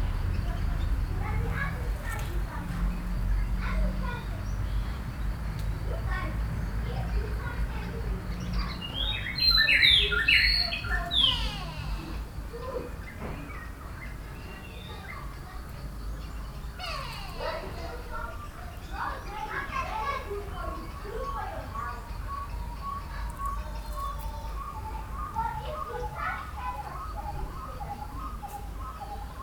Malindela, Bulawayo, Zimbabwe - Afternoon song
Malindela afternoon song